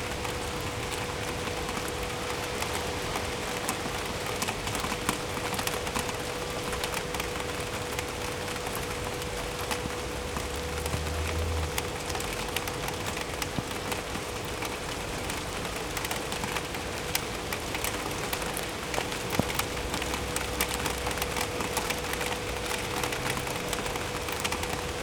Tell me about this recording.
rain from a 6th story window of hotel city, courtyard side